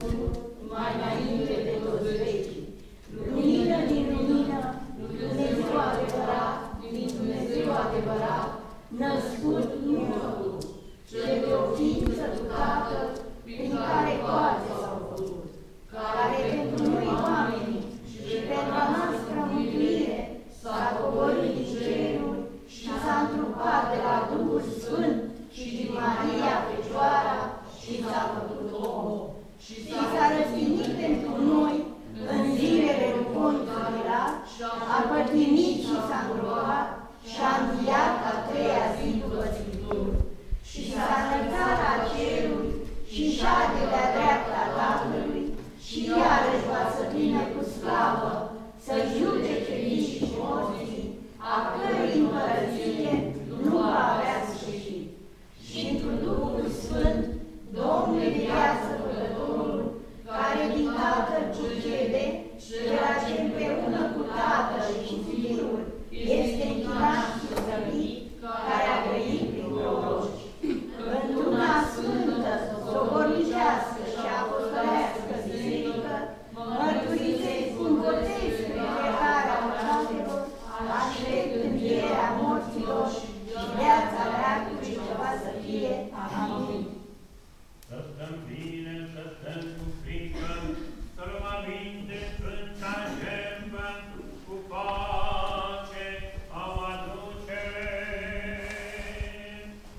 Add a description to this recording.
Excerpt from Sunday prayer, village church, Candesti Vale